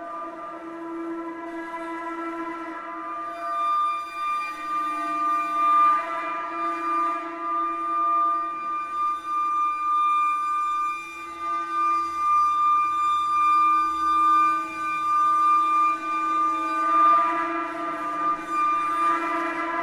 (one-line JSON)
{"title": "M.Lampis - A chair is playing on the ground of an old church", "latitude": "45.81", "longitude": "9.09", "altitude": "214", "timezone": "GMT+1"}